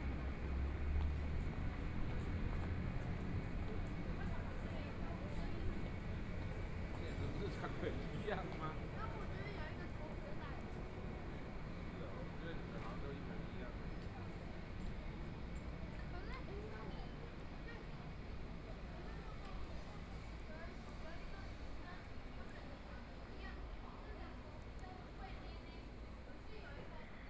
Walking across the different streets, Traffic Sound, Market, Binaural recordings, ( Keep the volume slightly larger opening )Zoom H4n+ Soundman OKM II
Taipei City, Taiwan, 15 February, 14:13